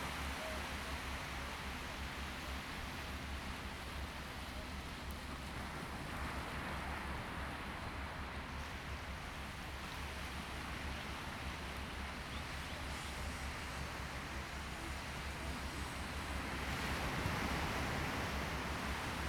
漁福村, Hsiao Liouciou Island - At the beach
At the beach, Sound of the waves
Zoom H2n MS+XY